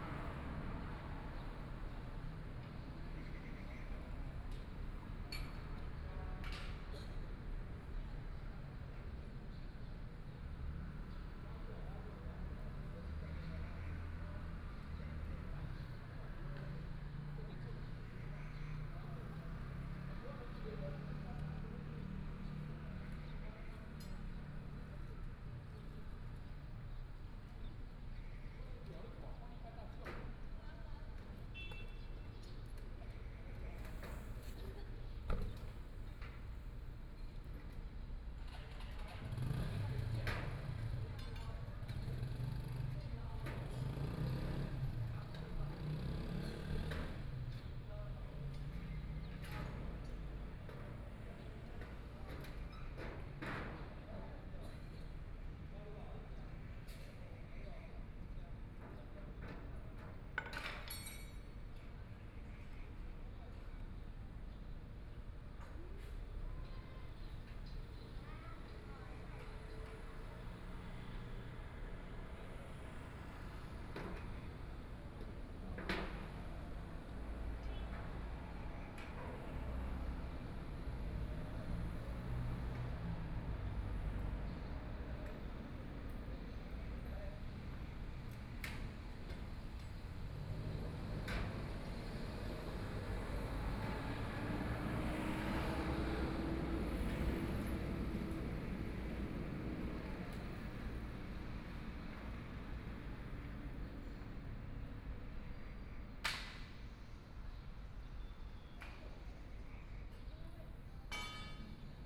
Dongshan Station, Yilan County - Morning town
Sitting in the square in front of the station, Homes under construction across the sound, Followed by a train traveling through, Binaural recordings, Zoom H4n+ Soundman OKM II